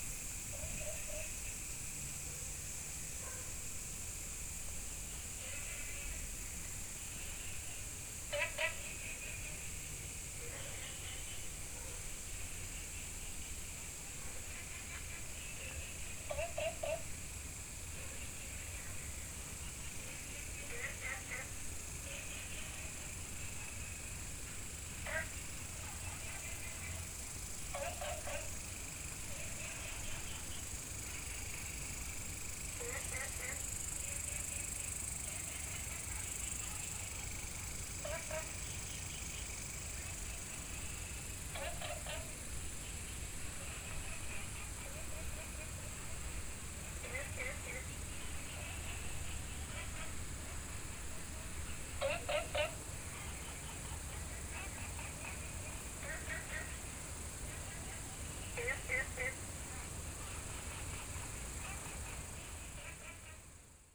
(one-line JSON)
{"title": "青蛙ㄚ婆ㄟ家, 桃米里, Puli Township - Insect sounds", "date": "2015-09-03 19:59:00", "description": "Insect sounds, Frog calls, Traffic Sound", "latitude": "23.94", "longitude": "120.94", "altitude": "463", "timezone": "Asia/Taipei"}